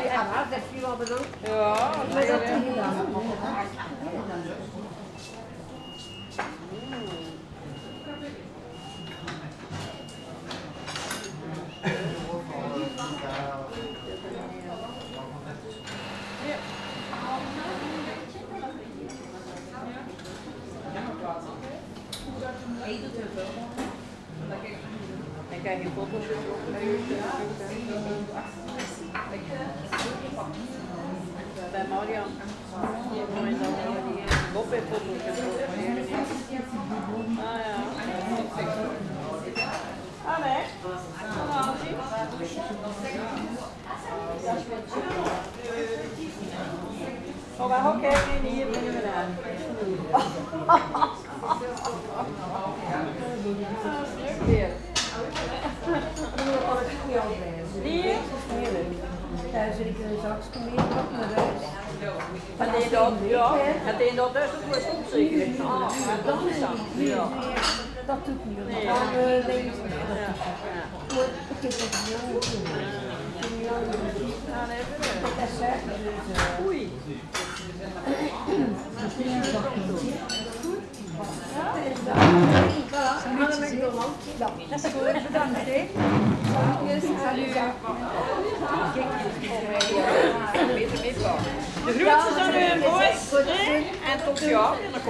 Gent, België - In the pastry shop
Aux Merveilleux de Fred (name in french), Mageleinstraat. An establishment where pastries and coffee are sold : it’s delicious and friendly. Terrible and adorable grandmothers !